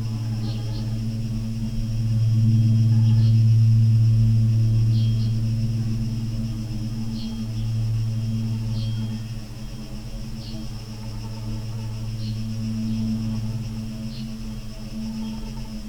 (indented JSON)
{
  "title": "quarry, Marušići, Croatia - void voices - stony chambers of exploitation - borehole, microphony",
  "date": "2015-07-22 18:28:00",
  "description": "summer afternoon, very hot and dry",
  "latitude": "45.42",
  "longitude": "13.74",
  "altitude": "269",
  "timezone": "Europe/Zagreb"
}